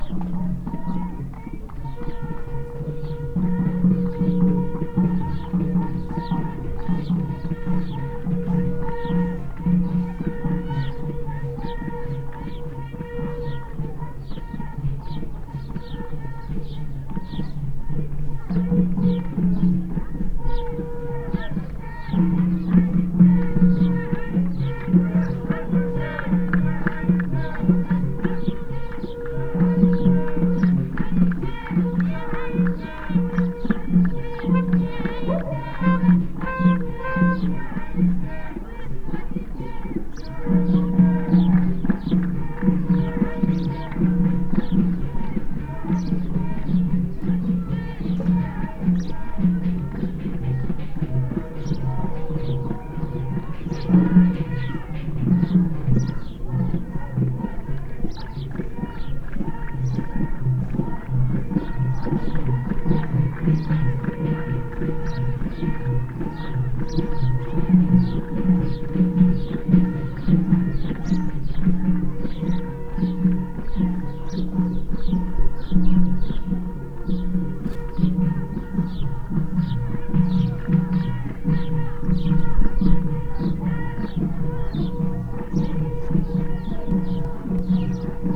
Community Centre, Tissardmine, Marokko - Moroccan Berber Wedding
Recorded with Sennheiser ME66, Mono